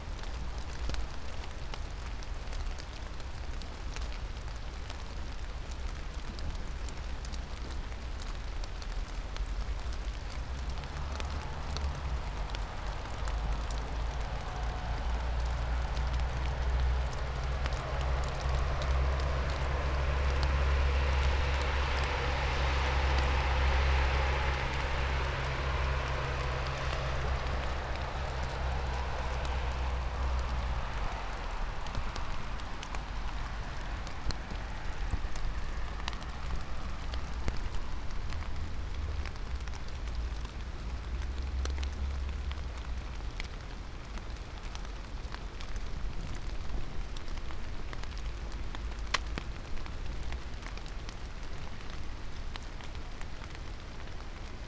hedgerow, prule, ljubljana - rain on april leaves
Ljubljana, Slovenia